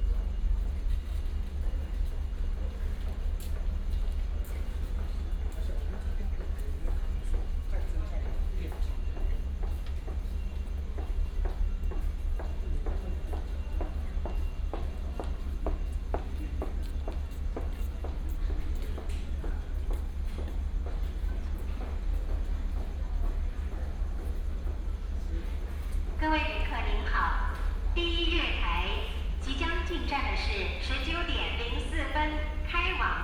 新竹火車站, Hsinchu City - walking into the Station
From the station hall, Walk into the station platform, Station information broadcast
Hsinchu City, Taiwan, 6 April 2017, ~19:00